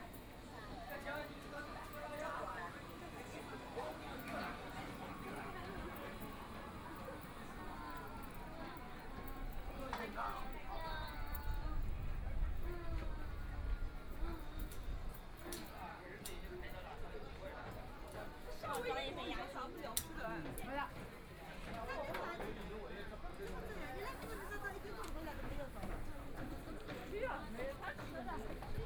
Four archway Road, Shanghai - Walking through the Street
Walking through the Street, Traffic Sound, Walking through the market, Walking inside the old neighborhoods, Binaural recording, Zoom H6+ Soundman OKM II
Huangpu, Shanghai, China